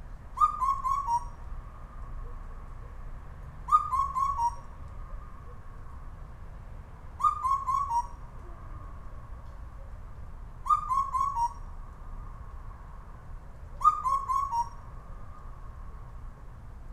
A rather strong, undeterred night bird (Owl?)...very close to a built up area in Chuncheon...broadcasting from the same general area every night for 1 week now (since Friday May 31st)...a nice echo can be heard returning from the surrounding apartment blocks...